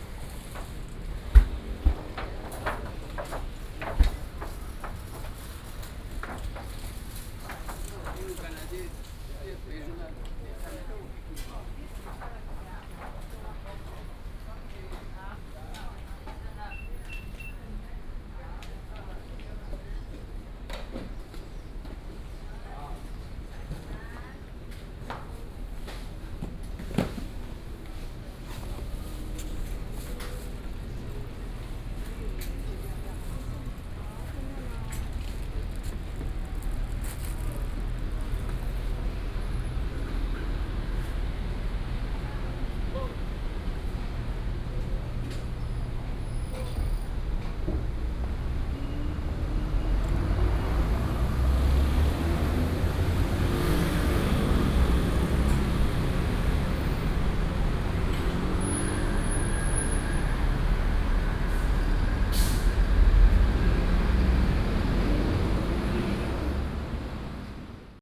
Aly., Ln., Sec., Heping E. Rd., Da’an Dist. - Walking in a small alley
Walking through the market, Walking in a small alley
Taipei City, Taiwan, July 2015